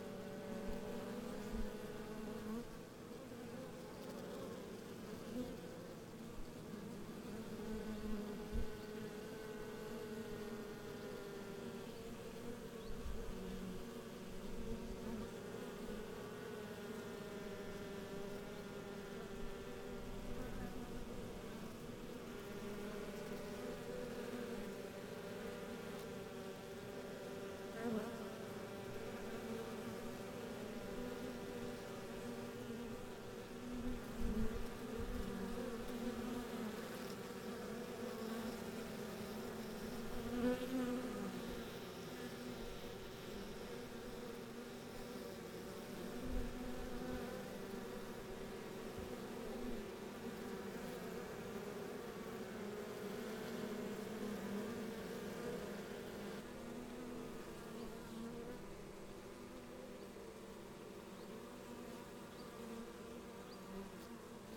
Saint-André, La Hoguette, France - La Hoguette - Abbaye dr St-André en Gouffern
La Hoguette - Calvados
Abbaye de St-André en Gouffern
Les ruches